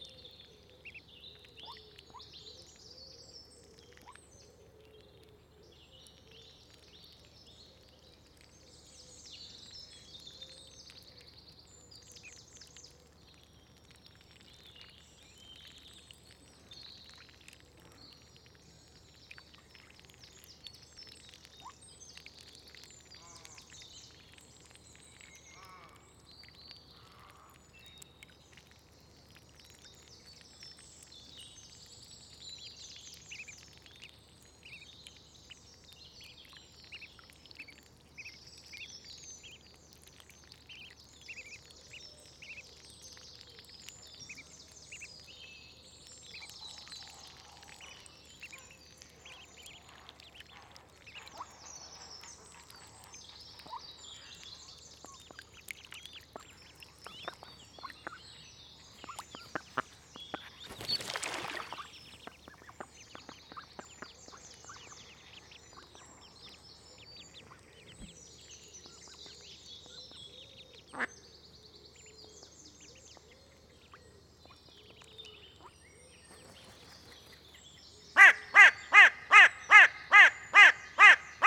Atlantic Pond, Ballintemple, Cork, Ireland - Ducklings Feeding
A mother duck bringing her nine ducklings to feed in a small muddy puddle in the grass on the edge of the pond. The ducklings are very quiet at the start of the recording as I wanted to keep the sense of them approaching, but by the middle they're right up next to the microphone. I'd seen them use this puddle the previous day so in the morning I left my microphone there and waited for them to come along. Their cheeps, wing splashes, beak snaps and bloops, and the sounds the mother uses to talk to her chicks are all amazing. While they were feeding two hooded crows flew over (to try and catch a duckling for breakfast). Mother duck chased them away, and you can hear me running across to scare the crows too. Recorded with a Zoom H1.